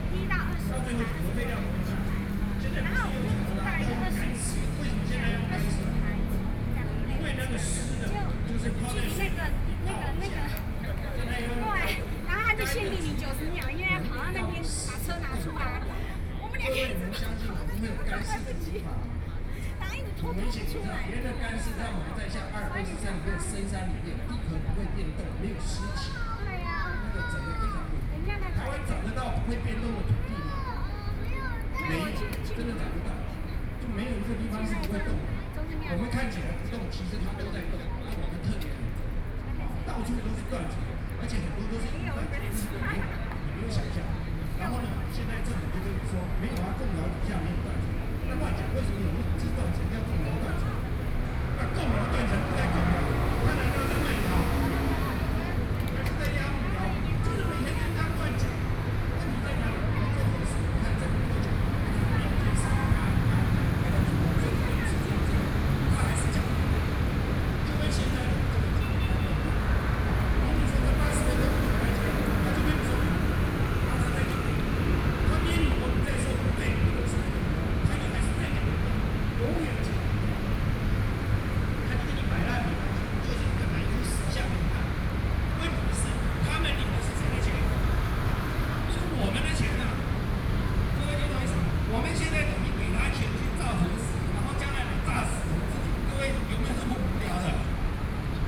Chiang Kai-Shek Memorial Hall, Taipei City - anti–nuclear power
anti–nuclear power, Sony PCM D50 + Soundman OKM II